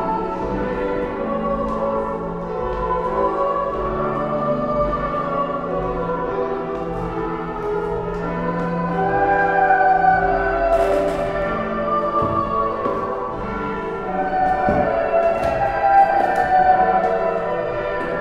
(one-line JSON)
{"title": "Sophienstraße, Berlin, Germany - wooden staircase", "date": "2013-05-25 12:00:00", "description": "walk down and out and up and down on the wooden staircase to the choir, accompanied with church bells and choir exercises", "latitude": "52.53", "longitude": "13.40", "altitude": "45", "timezone": "Europe/Berlin"}